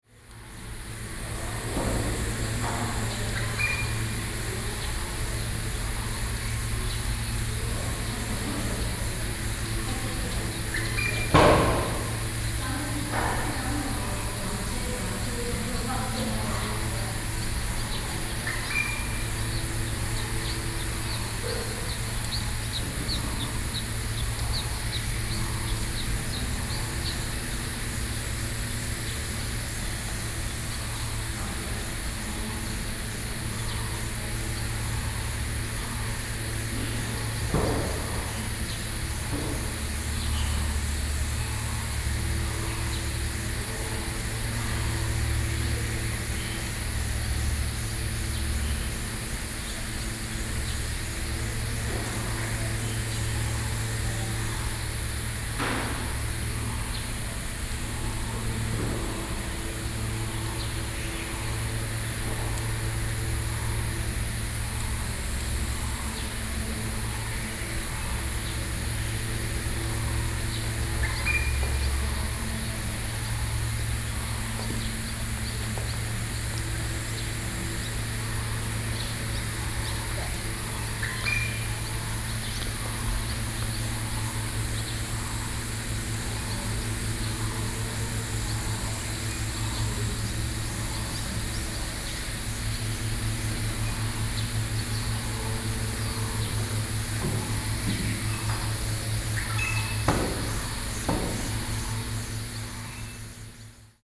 Hsing Tian Kong - In the hall of the temple
In the hall of the temple, The sound of the fan, Birds, Sony PCM D50 + Soundman OKM II
台北市 (Taipei City), 中華民國, 22 June 2012, ~07:00